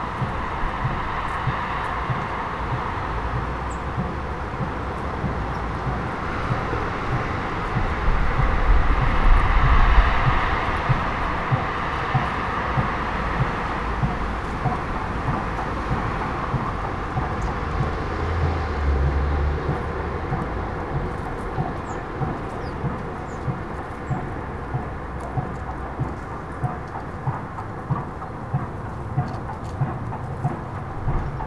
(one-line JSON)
{"title": "Dekerta, Kraków, Poland - (704 UNI) Distant construction site", "date": "2021-01-09 13:25:00", "description": "Recording of a Saturday afternoon with a distant construction site banging.\nRecorded with UNI mics of a Tascam DR100 mk3.", "latitude": "50.05", "longitude": "19.96", "altitude": "202", "timezone": "Europe/Warsaw"}